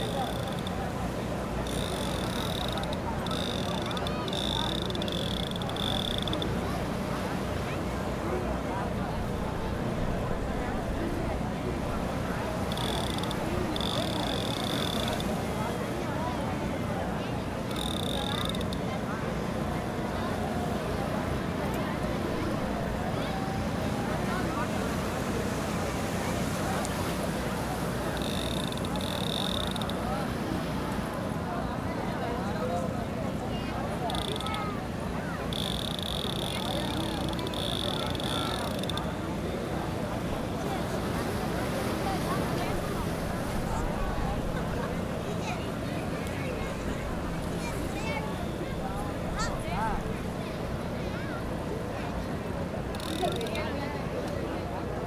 sunset 3 seas point Kaniyakumari. Known to be the southern most tip of the Indian Sub Continent where the Bay of Bengal, Indian Ocean and the Arabian Sea meet. known for pilgrimage and tourism is on the southernmost point of Indian sub-continent. An ancient temple of Goddess and Vivekanand Rock Memorial along with statue of Thiruvalluvar is the major attraction. This is also a Sunrise and Sunset point (Both)
Tamil Nadu, India, 28 October 2001